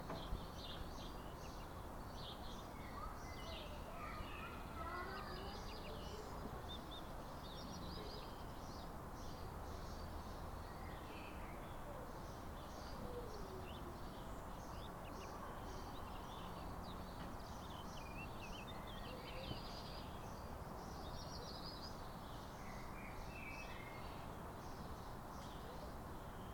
Egenolfstraße, Limburg an der Lahn, Deutschland - Sonntagmorgenstimmung
Landkreis Limburg-Weilburg, Hessen, Deutschland, 15 May 2022